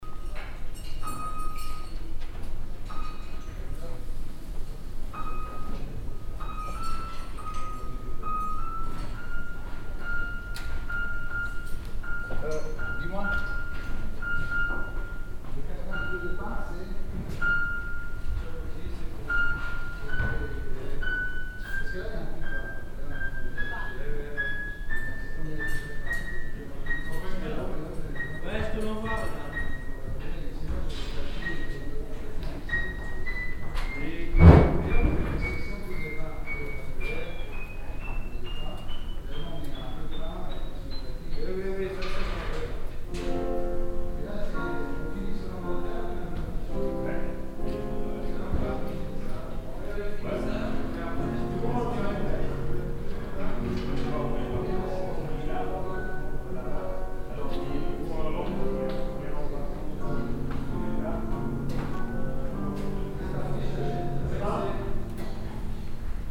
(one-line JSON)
{"title": "paris, la ferme du buisson, big stage", "description": "piano tuning on the big stage of the la ferme du buisson\ninternational cityscapes - social ambiences and topographic field recordings", "latitude": "48.84", "longitude": "2.62", "altitude": "90", "timezone": "Europe/Berlin"}